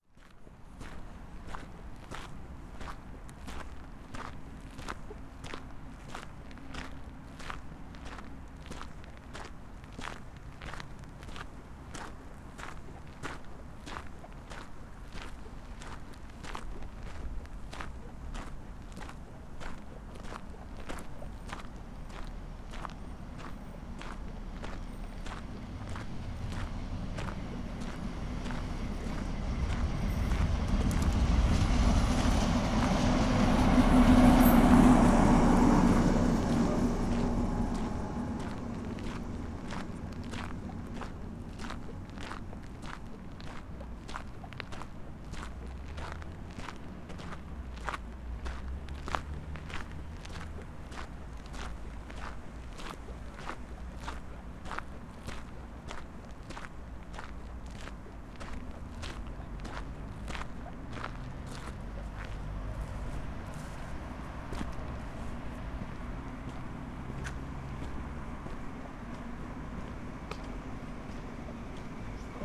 cologne, stadtgarten, sbahn haltestelle - station walk
short walk along the stracks and down the station, late evening
September 2011